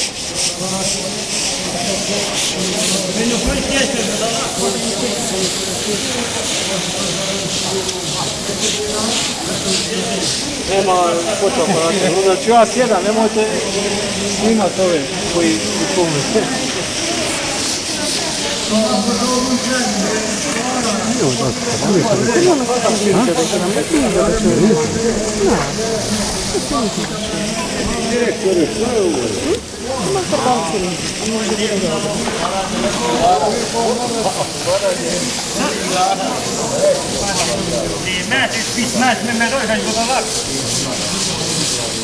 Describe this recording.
jet of water recorded from ground level, voices of inhabitants and workers